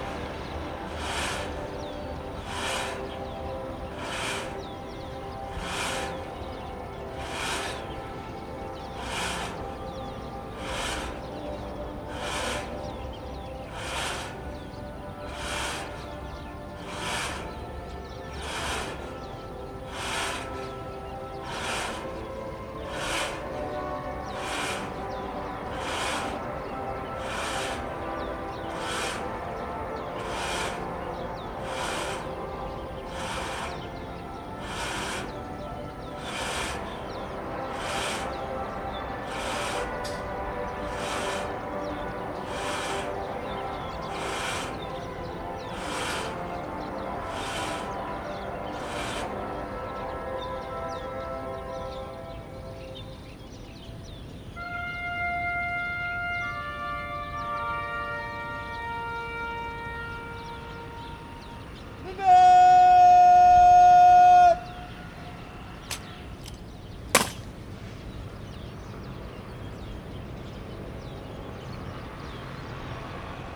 National Chiang Kai-shek Memorial Hall, Taipei - Flag Raising Ceremony
Flag Raising Ceremony, National anthem, Pull the flag-raising, Sony ECM-MS907, Sony Hi-MD MZ-RH1